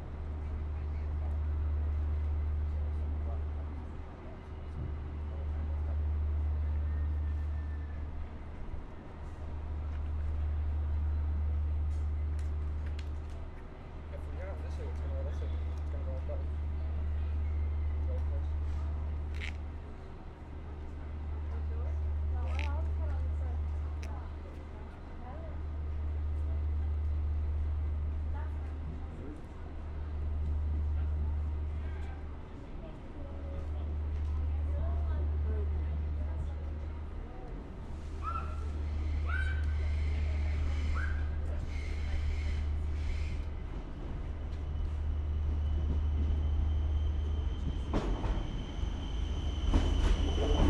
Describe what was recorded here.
On hollydays, visiting Dublin, Waiting for the "dart". Howth Direction ! Recording Gear : 2 primo EM172 + Mixpre 6 (AB), Headphones required.